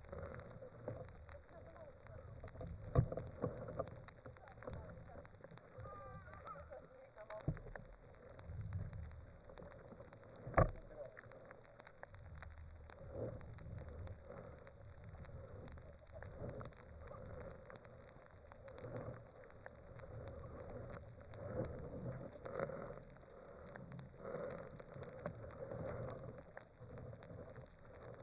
Lithuania, Utena, Polystyrene foam on water
a piece polystyrene foam laying on water. recorded with contact microphones